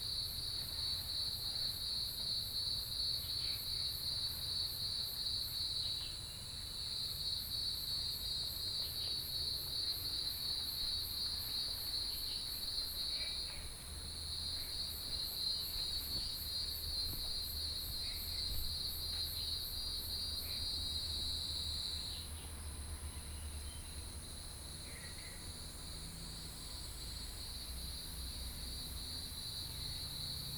埔里鎮桃米里, Nantou County - In Bed and Breakfasts
Bird calls, Cicadas sound, Frog calls